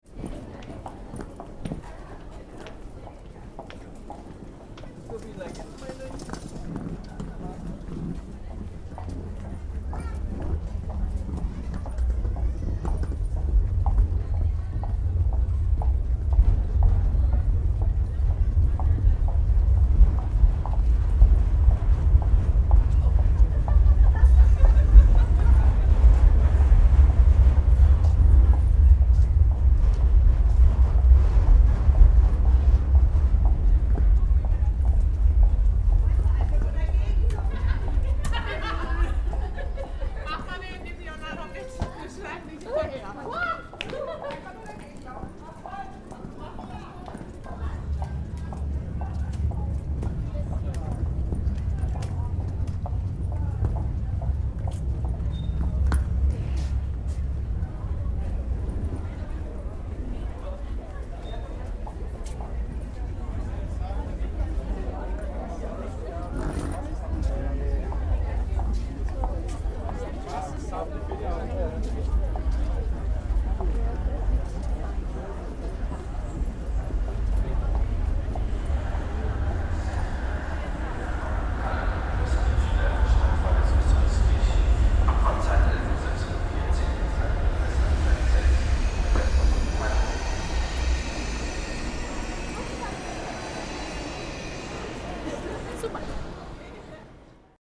cologne, main station, hall
recorded june 6, 2008.- project: "hasenbrot - a private sound diary"